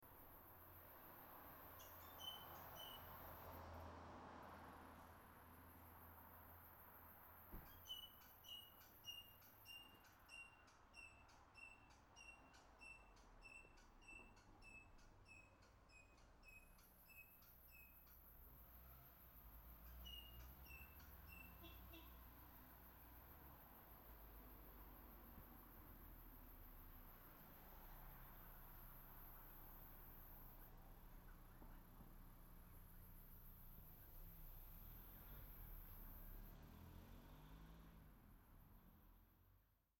Rijeka, Croatia - Drenova Parking
Sony PCM-D50 wide
9 July